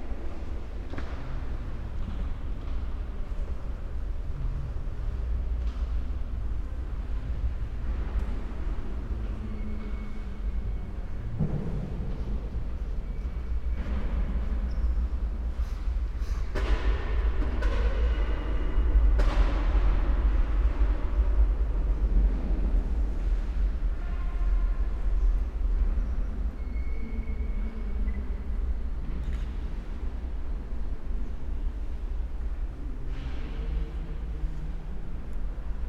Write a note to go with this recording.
slow walk with few stops through the church